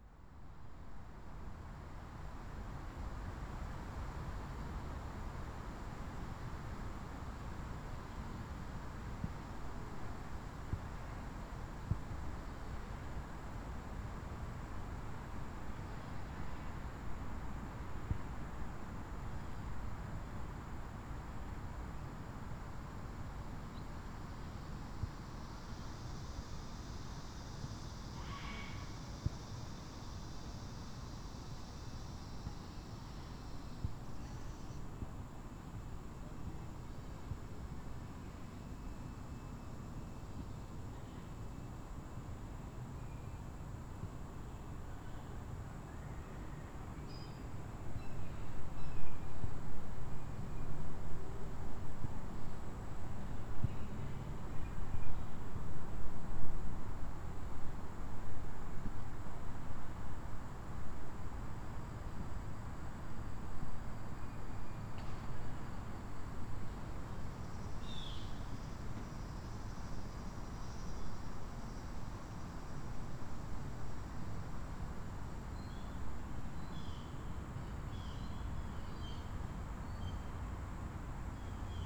Tolleson Park, McCauley Rd, Smyrna, GA, USA - Quiet Day At The Park
The ambience of a quiet park. There were some people bumping a volleyball around in the distance, as well as some people around the public pool behind the recorder.